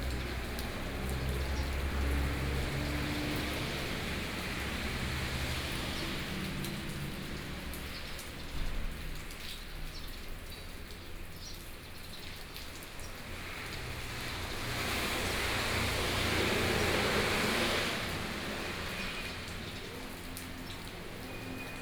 In the temple, Rainy weather, Traffic Sound
Sony PCM D50+ Soundman OKM II